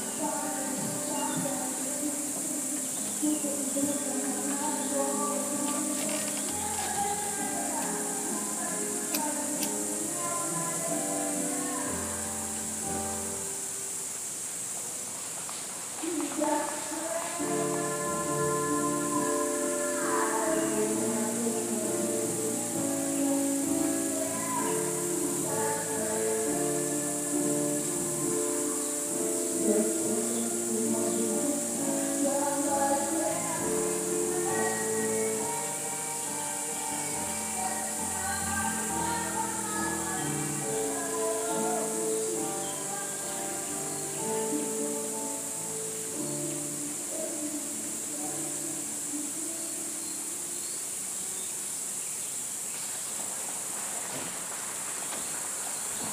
Каптаруны, Беларусь - Naka's performance during Chronotop art festival
2 July 2016, 20:40